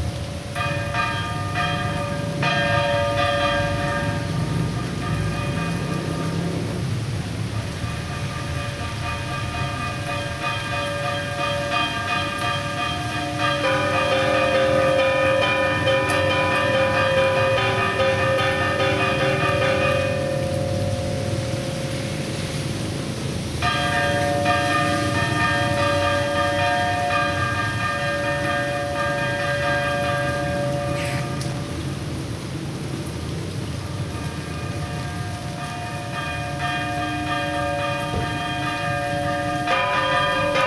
Ascensión de Guarayos, Bolivia - Campanary Church
Sound of the bells of a Church in Guarayos.
30 January 2007